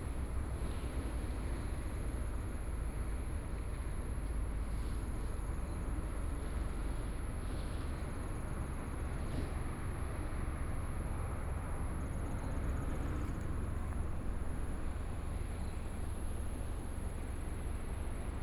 萊萊地質區, 貢寮區福連村 - Sitting on the coast
Sitting on the coast, Sound of the waves, Traffic Sound, Hot weather